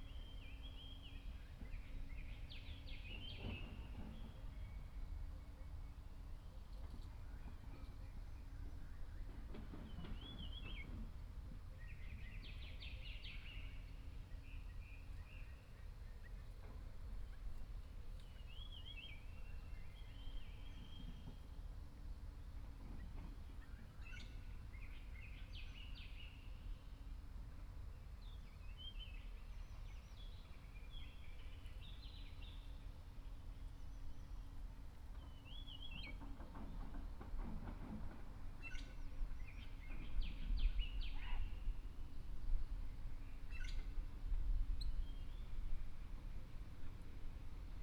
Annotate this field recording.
in the wetlands, Bird sounds, Construction Sound